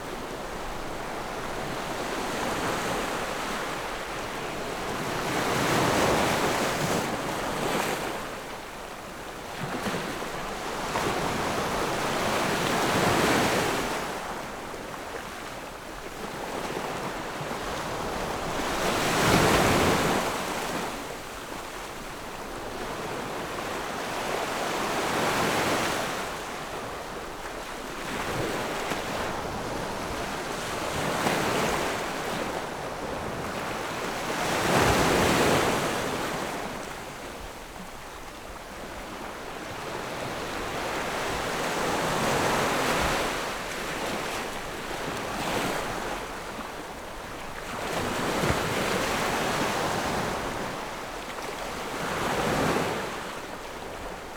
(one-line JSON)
{"title": "芹壁村, Beigan Township - At the beach", "date": "2014-10-15 12:16:00", "description": "Sound of the waves, At the beach\nZoom H6 +Rode NT4", "latitude": "26.22", "longitude": "119.98", "timezone": "Asia/Taipei"}